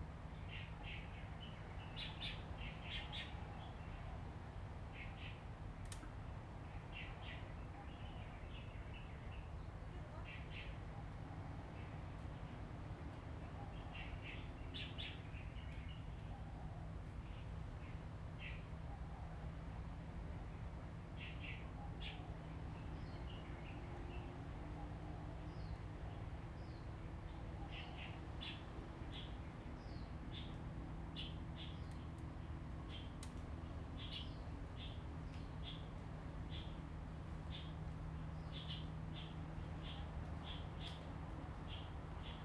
In the woods, Birds singing, Sound of the waves, Traffic Sound
Zoom H2n MS+XY